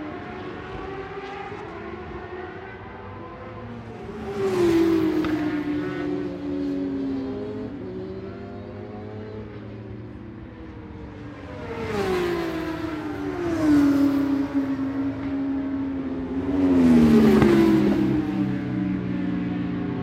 Scratchers Ln, West Kingsdown, Longfield, UK - BSB 2005 ... Superbikes ... FP2 contd ...
British Superbikes 2005 ... Superbikes ... FP2 contd ... one point stereo mic to minidisk ...